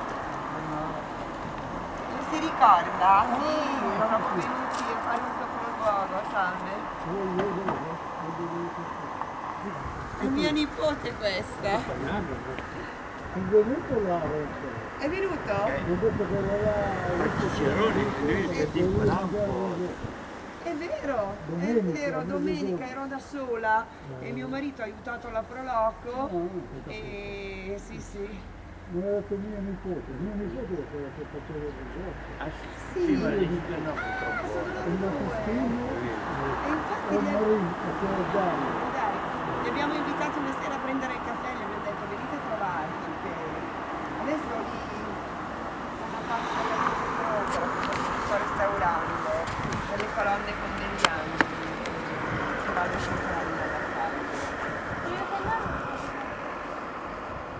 Castle of Lardirago (PV), Italy - people on the bench in front of the castle
people talk quitely on a bench. at the end the old guardian invites to visit the Castle